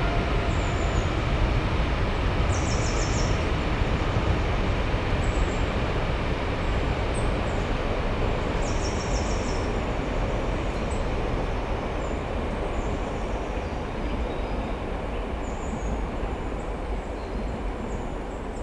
Maintenon, France - Quiet forest
Very quiet ambiance in a forest during a cold winter morning and two trains crossing.
24 December 2016, 09:57